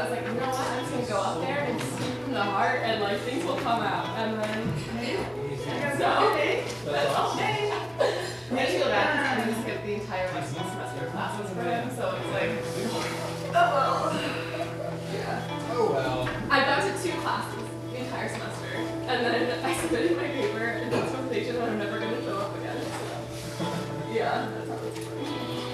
E Washington St, Ann Arbor, MI, USA - Literati coffee shop, 10am Saturday morning